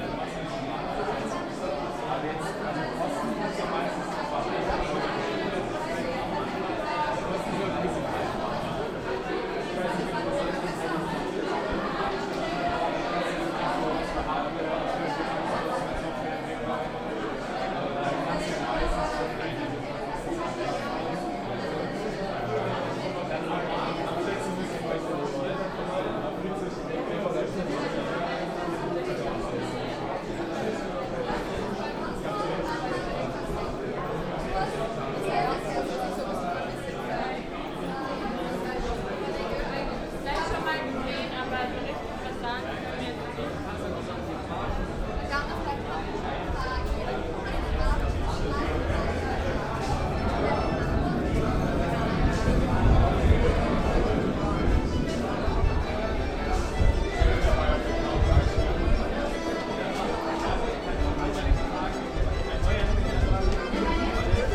Mitte, Berlin, Deutschland - In the tunnel between the subways with accordionist
Im Tunnel zwischen den U-Bahnen mit Akkordeon-Spieler
Zwischen der U6 und der U2 gibt es einen langen niedrigen Tunnel: eine Gruppe von Jugendlichen, die sich lautstark unterhält. Am Ende des Tunnels ein Akkordeon Spieler - er beginnt mit Schostakowitschs Walzer Nr. 2 - Ich bleibe in seiner Nähe, befinde mich eine halbe Treppe über ihm. Menschen gehen die Treppen rauf und runter. Eine U-Bahn fährt ein und wieder ab. Ich entferne mich langsam vom Spieler und komme nochmal an der Gruppe der Jugendlichen vorbei.
Between the U6 and U2 there is a long, low tunnel: a group of young people who talks loudly. At the end of the tunnel an accordion player - he begins with Shostakovich's Waltz No. 2. I stay close to him, half-staircase above him. People walk the stairs up and down. The subway arrives and departs. I leave slowly the player. pass again by the group of young people.